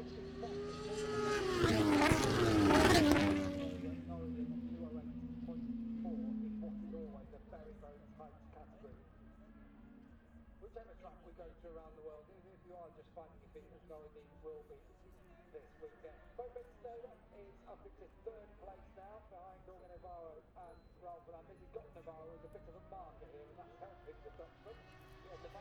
moto two free practice one ... maggotts ... dpa 4060s to MixPre3 ...
Towcester, UK, 27 August 2021, 10:55